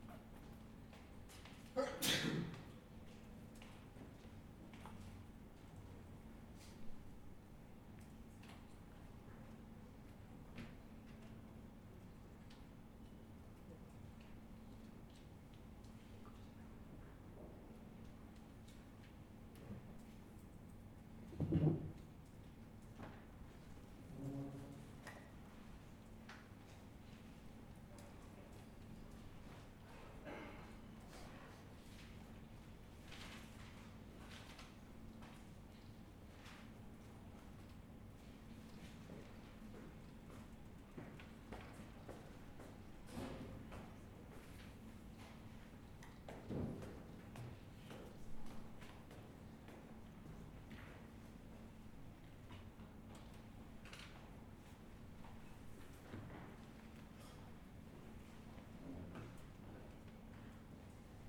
Cantoblanco Universidad, Madrid, España - Reading room
I chose a table in the middle of the room and sit down. I put the microphone at the
middle of the table. There are not many people. At the next desk someone takes out a
notebook from his backpack and puts it on the table. The dragging
of a chair far can be heard from where I am. Sound of steps in the stairs that heads to the second
floor. Someone sneezes. It is heard the typing on the laptops. More steps. Murmurs.
Moving things on the tables. The zip of a backpack while it's opened. Beeps of returning a
book.
Recorded with a Zoom H4n.